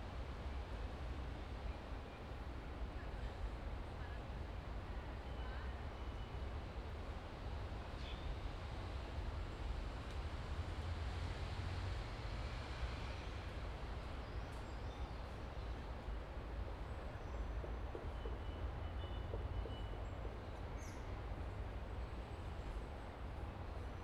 Parque - Tenente Siqueira Campos - Trianon - Rua Peixoto Gomide, 949 - Cerqueira César, São Paulo - SP, 01409-001, Brasil - Silencio e contraste
O local e uma passagem com pouco fluxo de pessoas e bastante arvores, foi utilizado um gravador tascam dr-40 fixado em um tripé.